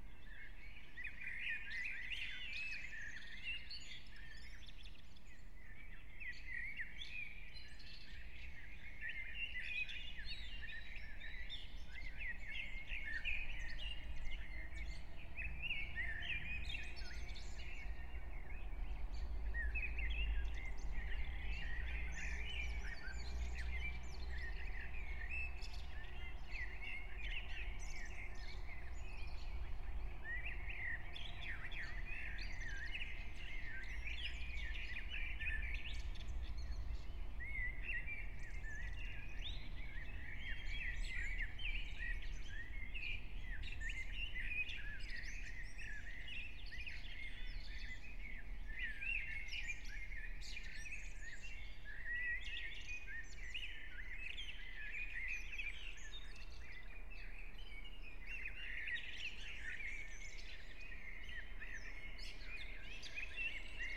04:00 Brno, Lužánky - early spring morning, park ambience
(remote microphone: AOM5024HDR | RasPi2 /w IQAudio Codec+)